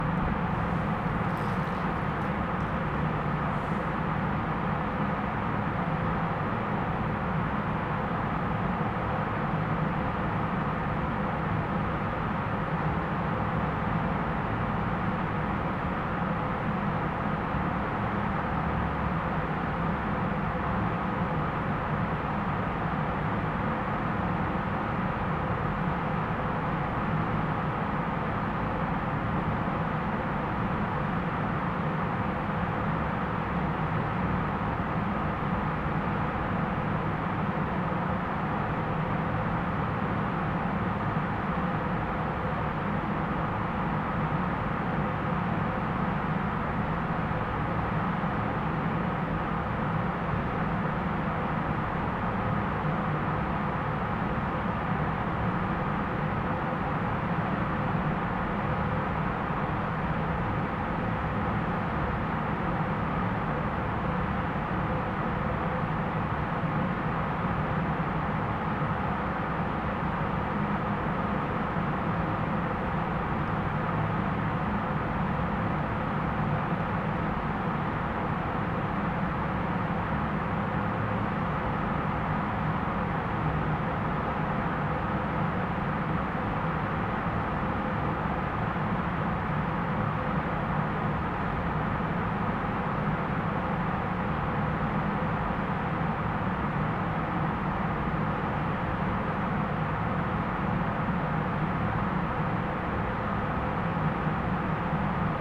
DIA:, Beacon, NY, Verenigde Staten - Richard Serra installation
Sound of the airconditioning recorded from inside a Richard Serra installation. Zoom H4n Pro